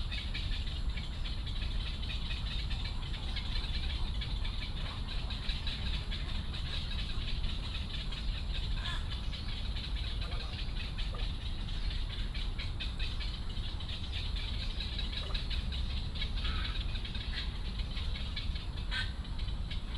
Daan Forest Park, Taiwan - Bird calls
in the Park, Bird calls, Walking along the ecological pool